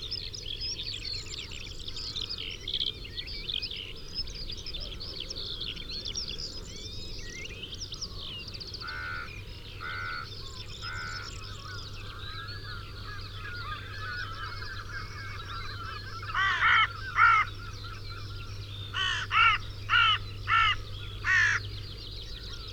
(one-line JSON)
{"title": "Green Ln, Malton, UK - open fields ... skylark springboard ...", "date": "2008-05-18 05:30:00", "description": "open fields ... skylark springboard ... mics to minidisk ... song and calls from ... skylark ... corn bunting ... carrion crow ... linnet ... lapwing ... herring gull ... red-legged partridge ... pheasant ... rook ...", "latitude": "54.13", "longitude": "-0.56", "altitude": "121", "timezone": "Europe/London"}